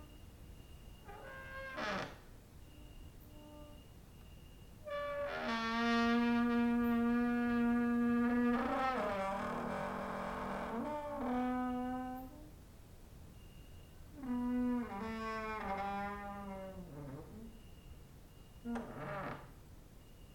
Mladinska, Maribor, Slovenia - late night creaky lullaby for cricket/9
cricket outside, exercising creaking with wooden doors inside
15 August 2012